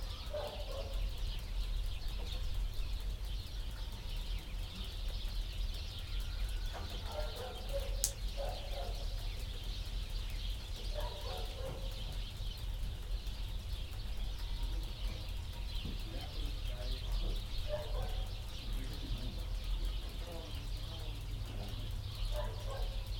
Rapsani, Greece - Morning Snow
Morning, it snowed and the wolves are hauling.